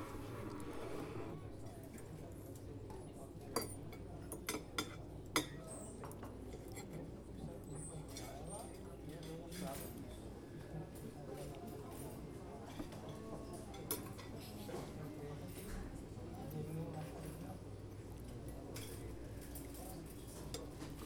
Av. Paulista - Bela Vista, São Paulo - SP, 01311-903, Brasil - Cafeteria SESC 10h da manha - SESC Paulista
10h da manha de uma quarta feira nublada, a cafeteria do SESC Paulista encontra-se calma e ocupada por clientes que ali se sentam para tomar seu café da manha. Os talheres, as xícaras, os copos e os pratos nao emitem som pelo ambiente a todo momento. Junto destes, a maquina de expresso e os clientes conversando ecoam pelo estabelecimento no alto de uma das avenidas mais movimentadas da capital paulista.
Gravado com o TASCAM DR-40 sobre a mesa do local, com o proprio microfone interno.
- Bela Vista, São Paulo - SP, Brazil, 5 September 2018, 10:00